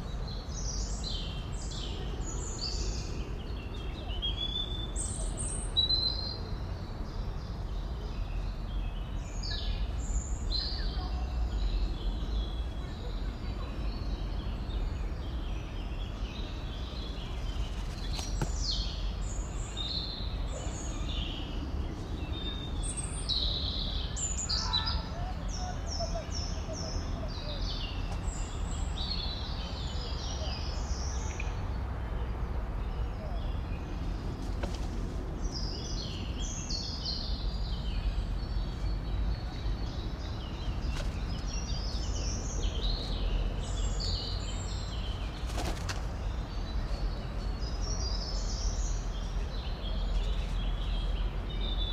Gabriel Faurélaan, Vorst, Belgium - Green parakeets, blackcaps, springtime birds in Parc Duden
Région de Bruxelles-Capitale - Brussels Hoofdstedelijk Gewest, België / Belgique / Belgien